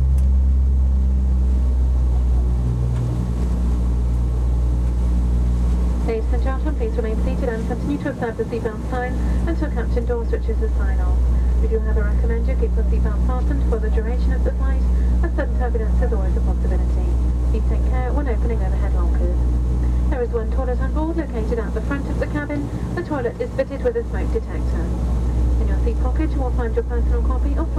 In plane from Exeter Airport